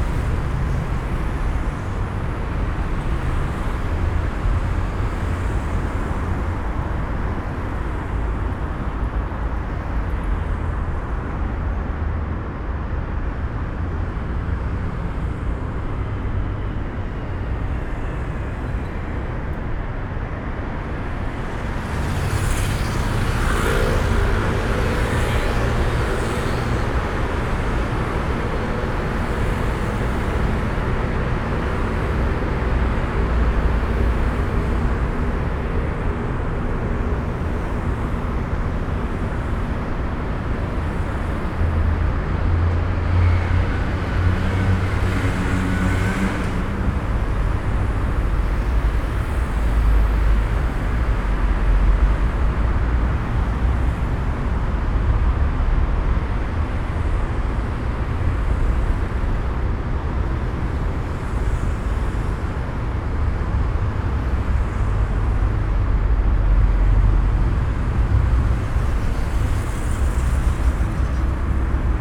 Triest, Via dell'Istria, Italy - traffic tunnel drone
tube resonances in the traffic tunnel below Via dell'Istria. The intense and almost violent drone at this place creates a sonic isolation to the listener, with strong physical impact.
(SD702, DPA4060)
Trieste, Italy, 6 September 2013